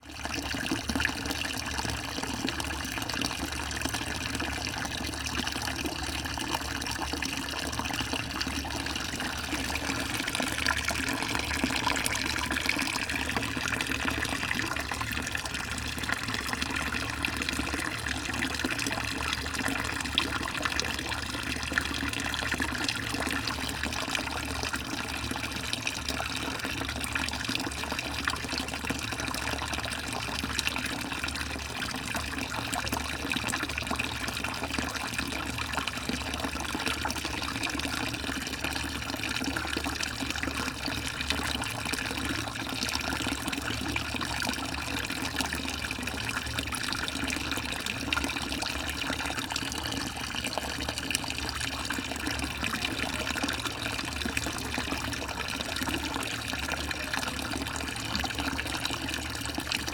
{"title": "Pyramide du Louvre Paris Fuite", "date": "2010-05-18 15:27:00", "description": "Pyramide du Louvre\nGrand bassin (en réparation)\nFuite dans le carrelage", "latitude": "48.86", "longitude": "2.34", "altitude": "44", "timezone": "Europe/Paris"}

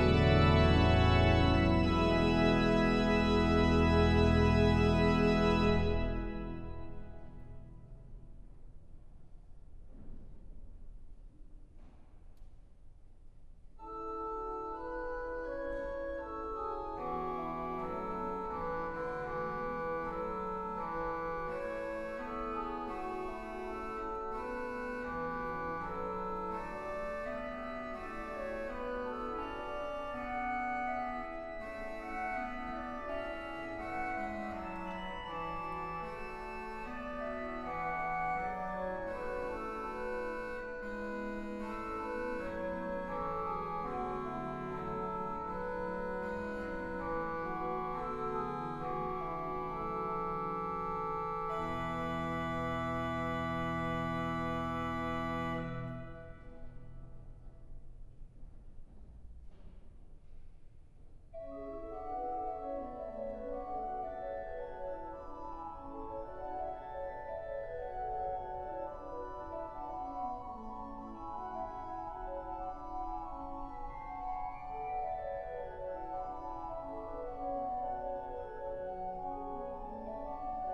{"title": "Tours, France - Organ into the cathedral", "date": "2017-08-13 17:45:00", "description": "Into the Saint-Gatien cathedral, an organist is playing organ. It's Didier Seutin, playing the Veni Creator op4 from Maurice Duruflé. This recording shows the organ is good, beyond the mass use. This organ was heavily degraded, it was renovated a few years ago.", "latitude": "47.40", "longitude": "0.69", "altitude": "59", "timezone": "Europe/Paris"}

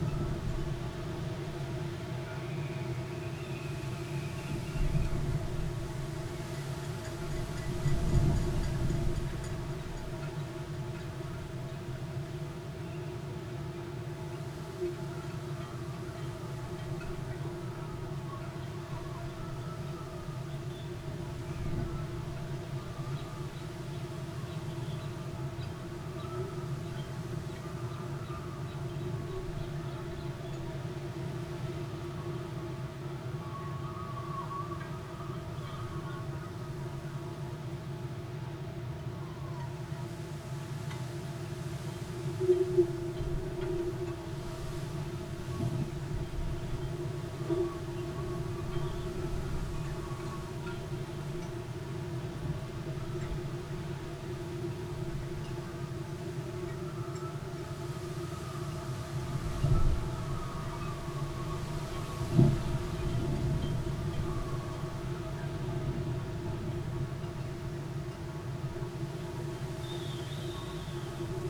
{"title": "workum: marina - the city, the country & me: mic in metal box trolley", "date": "2013-06-28 00:48:00", "description": "wind blown reed, mic in a metal box trolley\nthe city, the country & me: june 28, 2013", "latitude": "52.97", "longitude": "5.42", "timezone": "Europe/Amsterdam"}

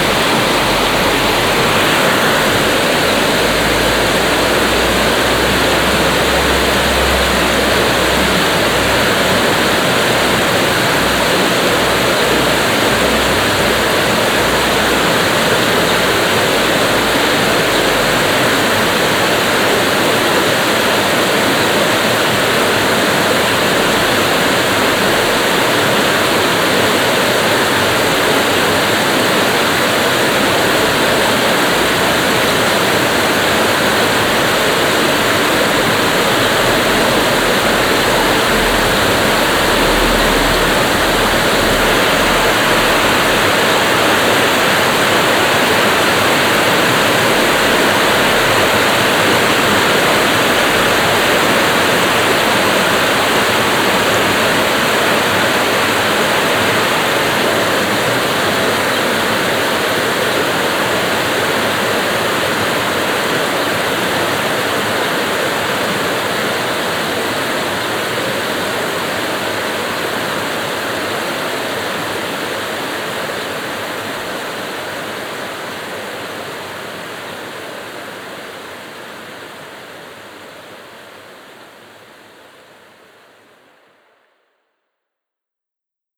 {"title": "Schiltach, Deutschland - Schiltach, Schiltach stream, small dam", "date": "2012-05-19 20:00:00", "description": "At the stream Schiltach near a small dam. The sound of the water crossing the dam and in the distance some traffic on the main road.\nsoundmap d - social ambiences, water sounds and topographic feld recordings", "latitude": "48.29", "longitude": "8.34", "altitude": "331", "timezone": "Europe/Berlin"}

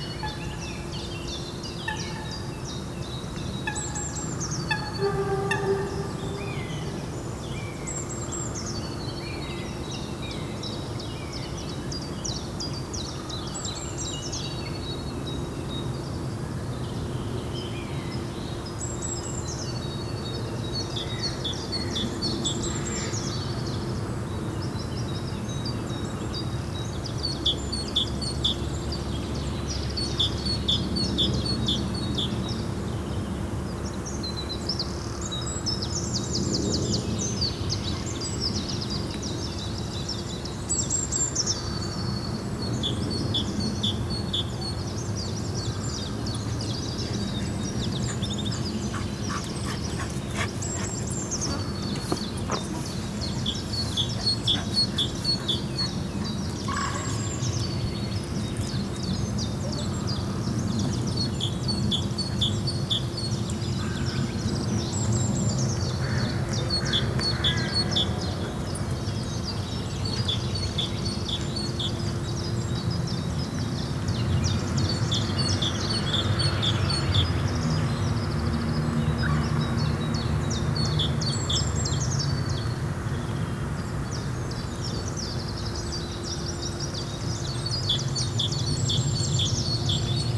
{"title": "Bois des Bruyères, Waterloo, Belgique - Birds near the bond ambience", "date": "2022-04-11 11:00:00", "description": "Oiseaux au bord de l'étang.\nJoggers, dogs.\nTech Note : Ambeo Smart Headset binaural → iPhone, listen with headphones.", "latitude": "50.72", "longitude": "4.38", "altitude": "104", "timezone": "Europe/Brussels"}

